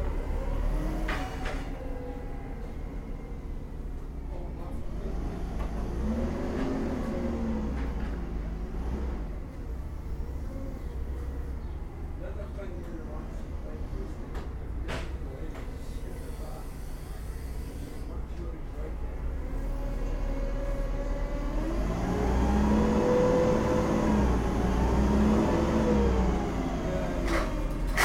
Shetland Islands, UK - Graded wool being loaded onto the lorry for scouring
This was recorded outside Jamieson & Smith, towards the end of the working day, as bales of graded wool were being loaded into a lorry ready to be driven South to Bradford, and scoured at Curtis Wool. You can hear some banter between Oliver Henry and co-workers as they load and secure the bales into the lorry. Sandra Mason - who works at J&S and is a legendarily talented knitter and designer - is leaving work, and we greet each other briefly in this recording. (Sandra Manson is the genius behind the beautiful lace christening robe recently presented to HRH The Prince of Wales.)